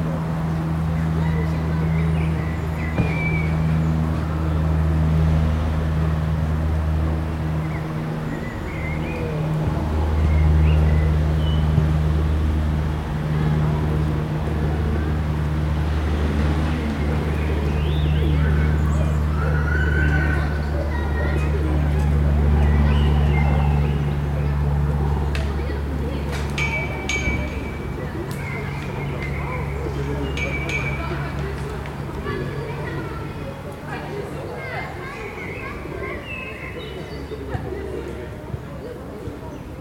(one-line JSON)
{"title": "Jardin Pierre Rous, Imp. André Lartigue, Toulouse, France - Pierre Rous", "date": "2022-04-15 16:00:00", "description": "ambience of the park\ncaptation : ZOOM H4n", "latitude": "43.62", "longitude": "1.47", "altitude": "153", "timezone": "Europe/Paris"}